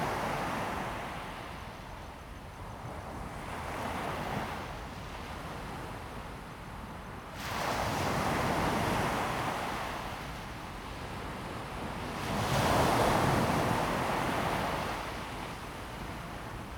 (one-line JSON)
{"title": "Fangshan Township, Pingtung County - Late night seaside", "date": "2018-03-28 03:57:00", "description": "Late night seaside, traffic sound, Sound of the waves\nZoom H2n MS+XY", "latitude": "22.25", "longitude": "120.66", "altitude": "4", "timezone": "Asia/Taipei"}